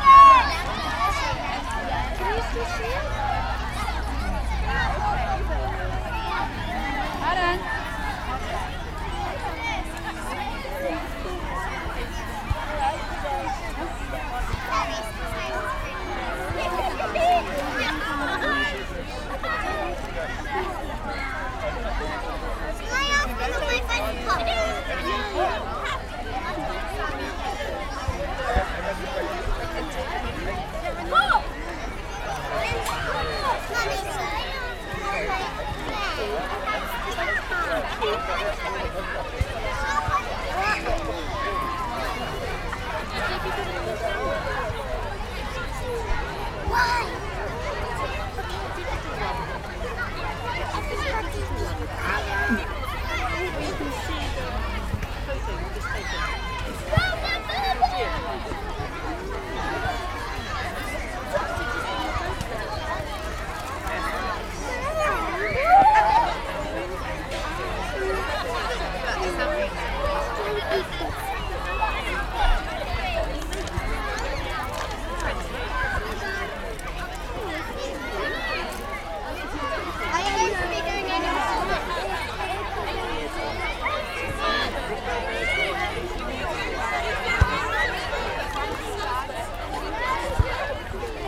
Bristol, City of Bristol, UK - Last Day Of School Term.
Children in park after the last day of the summer term. Recorded on Marantz 660 with two Rode condeser mics.